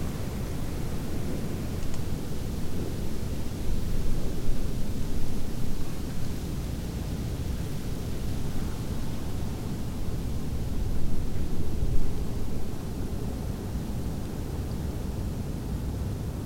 Moscow, Chasovaya St. - Old New Year Snowstorm
In Russia many people celebrate New Year’s Eve in the Julian calendar. It's like the final afterparty of the New Year celebration. We call it Old New Year. Like "Happy Old New Year", we say. This time it was accompanied by a heavy and beautiful snowstorm.
ORTF, Pair of AE5100, Zoom F6.